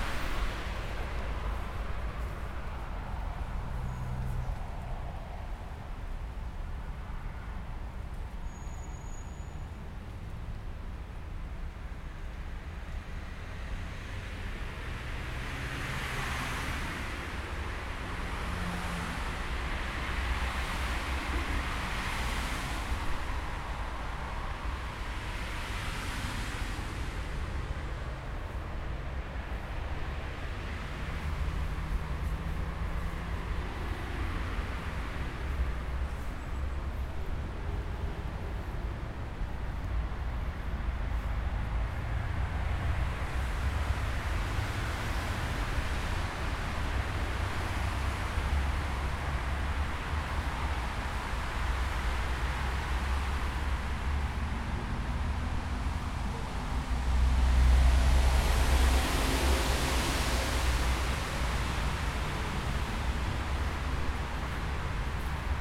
Weggeräusche im Faulerbad Freiburg, der Liegewiese des Hallenbades
Spaziergang ohne zu liegen auf der Liegewiese des Faulerbades in Freiburg